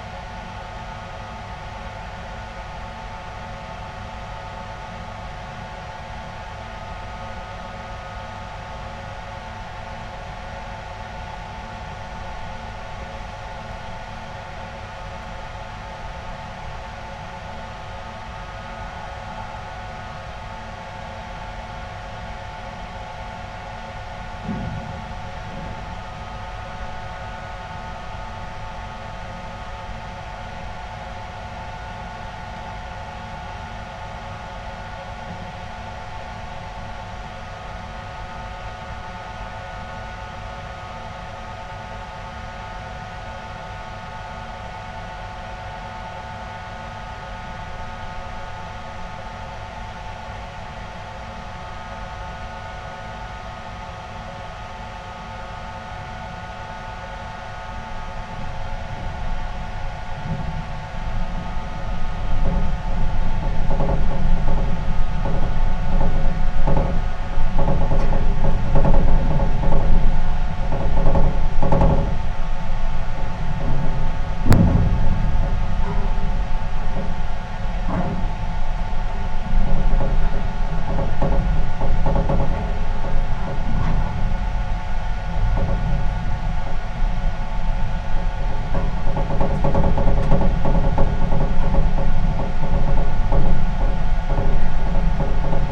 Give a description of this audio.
the resonance inside a window frame at the base of a mountain watchtower which also provided power to a ski-lift